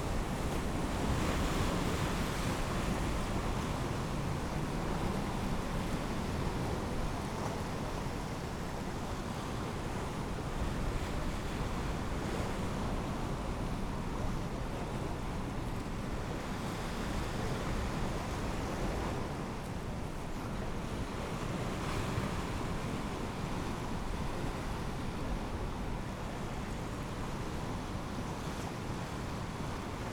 East Lighthouse, Battery Parade, Whitby, UK - east pier falling tide ...
east pier falling tide ... dpa 4060s clipped to bag to zoom h5 ...
England, United Kingdom